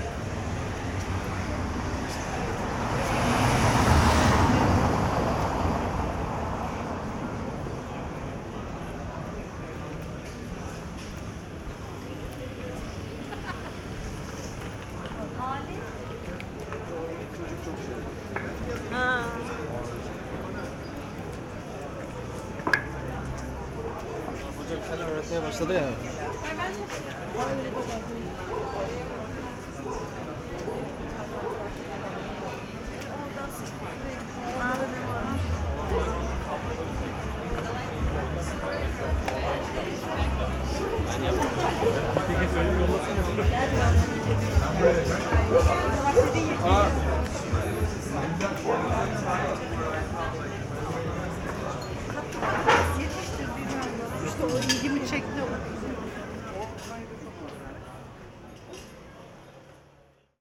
Karakolhane, Kadıköy, İstanbul, Turkey - 920b Walk on Kadıköy
Binaural recording of a walk on the "calm" part of Kadıköy.
Binaural recording made with DPA 4560 on a Tascam DR 100 MK III.
İstanbul, Marmara Bölgesi, Türkiye